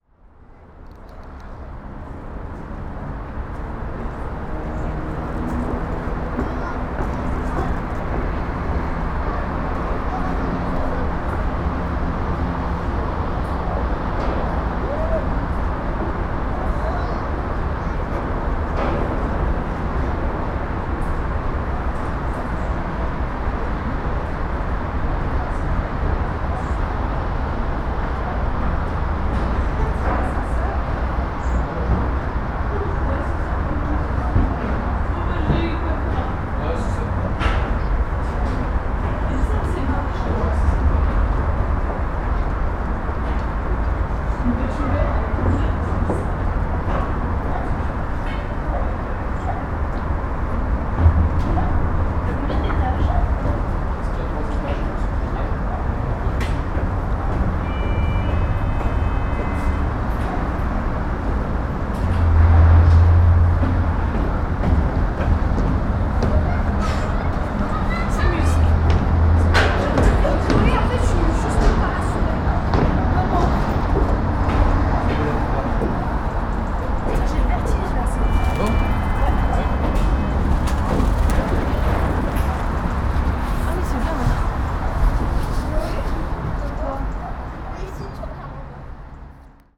Lyon, Quai Rambaud, la Sucrière
Biennale dart contemporain, on the roof of the building, pigeon flying away near the end.